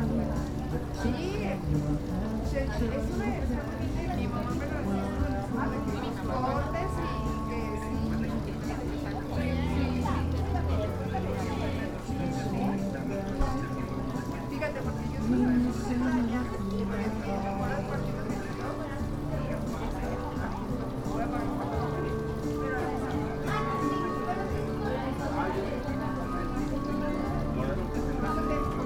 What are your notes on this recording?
On the terrace of PanPhila coffee shop. I made this recording on july 28th, 2022, at 7:16 p.m. I used a Tascam DR-05X with its built-in microphones and a Tascam WS-11 windshield. Original Recording: Type: Stereo, Esta grabación la hice el 28 de julio 2022 a las 19:16 horas.